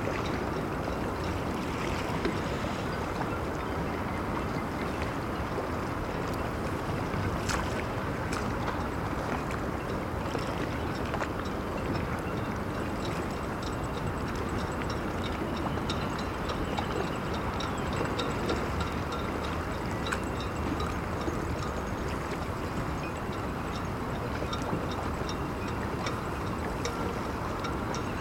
Ploumanach, Port, France - Port at Night wriggling Boats and Wind, Med
La nuit sur le port de ploumanac'h, les bateaux barbotent le vent
fait siffler les cordages et les mats s'entrechoquent.
A night at the Port, Boats are splashing, wind is whistling, masts are chiming.
+Some rumble wind ambiance.
Medium distance.
/Oktava mk012 ORTF & SD mixpre & Zoom h4n